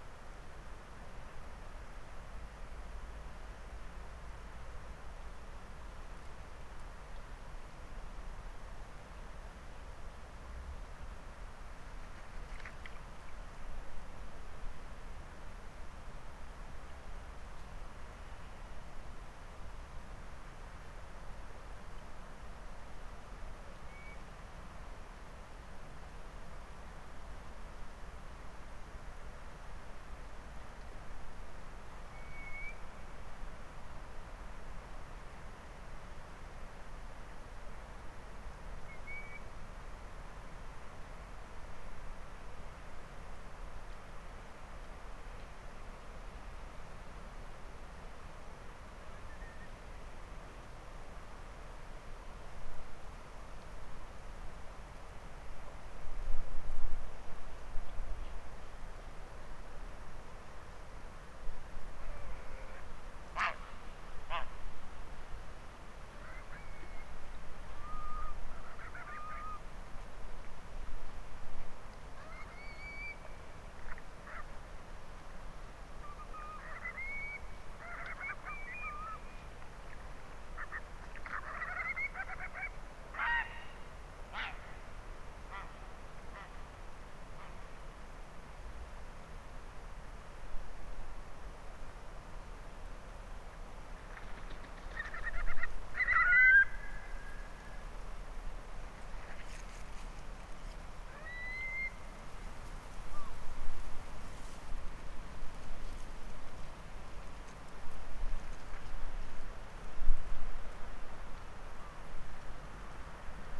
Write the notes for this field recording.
To the left of me were a line of pine trees that sang even with the slightest of breezes, and to the right was a hillside with a series of small waterfalls running down its slope. This recording includes Curlew, Grey Heron, Redshank, Greylag geese, Herring Gulls and the sound of seals rolling in the water of the still loch. Sony M10 and SAAS.